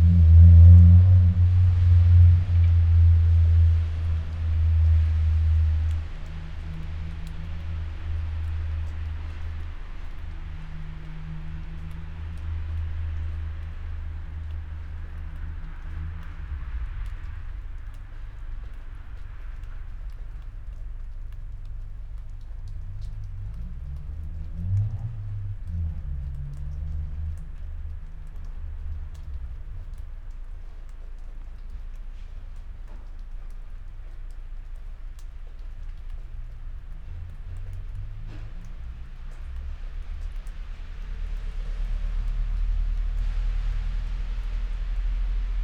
while windows are open, Maribor, Slovenia - ice